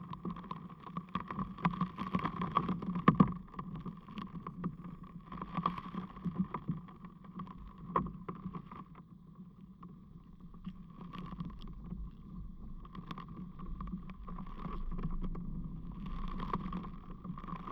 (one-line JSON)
{"title": "Berlin, Plänterwald, Spree - crackling ice (contact mics)", "date": "2018-03-01 13:35:00", "description": "partly frozen river Spree, crackling ice moved by wind and water\n(Sony PCM D50, DIY contact mics)", "latitude": "52.49", "longitude": "13.49", "altitude": "23", "timezone": "Europe/Berlin"}